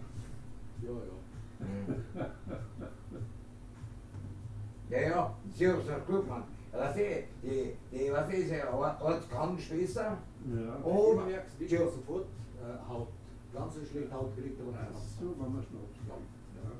Alt-Urfahr, Linz, Österreich - sonnenstein-buffet
sonnenstein-buffet, linz-urfahr
Linz, Austria, 2 January 2015, ~22:00